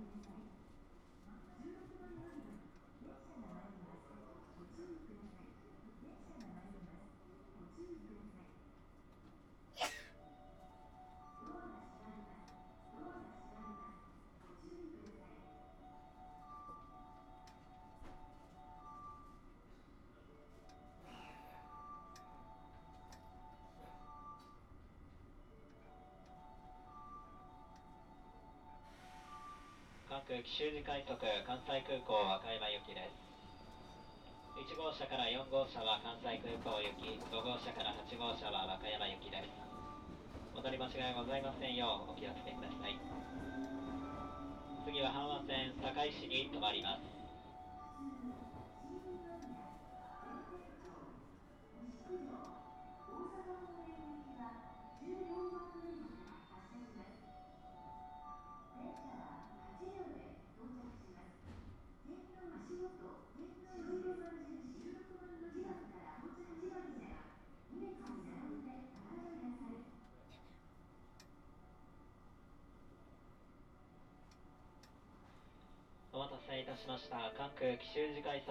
south of Osaka, on a JR train - ride towards the Kansai airport
a metal plate/footbridge moving around in a passage of a moving JR Kansai Airport Rapid Service. various announcements during a stop on one of the stations.
近畿 (Kinki Region), 日本 (Japan), 31 March 2013